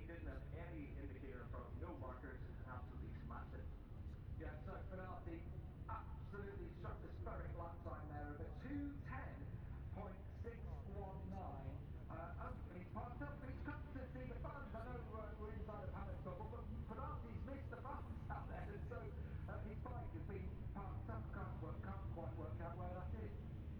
Silverstone Circuit, Towcester, UK - british motorcycle grand prix 2021 ... moto three ...
moto three free practice three ... copse corner ... olympus ls 14 integral mics ...